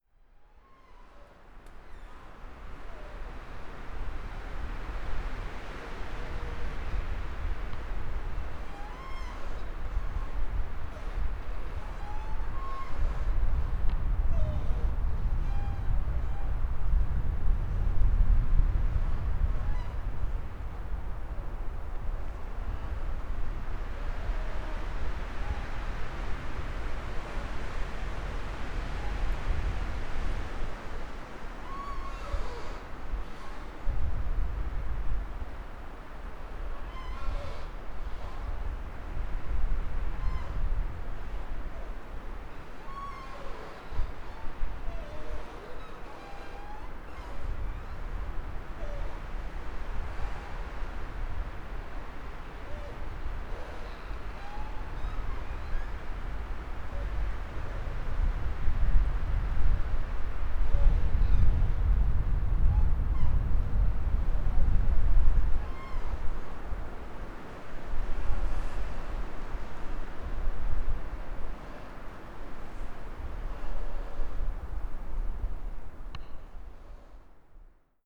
Vennford Reservoir windward shore - the trees sing and dance to storm eleanors tune
a small belt of mixed but mainly coniferous tall trees surround the beautifully small Vennford reservoir on Dartmoor. Storm Eleanor was doing her creative best and the trees were interpretive singers and dancers to her tune....truly magical.
Recorded on hand held Olympus LS5 with on-board mics
United Kingdom, 4 January